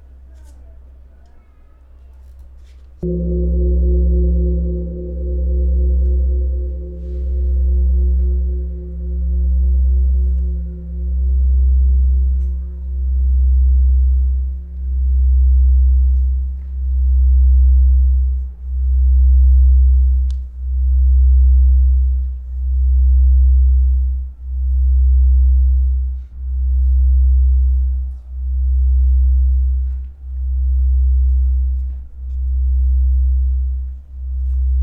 Disturbing the Peace
Ringing the great bronze bell at the Demilitarized Zone Peace Park...for 10,000won myself and Alfred 23 Harth rang the bell for peace on the peninsular...the great resonant sound traveling North over the border as a gesture of longing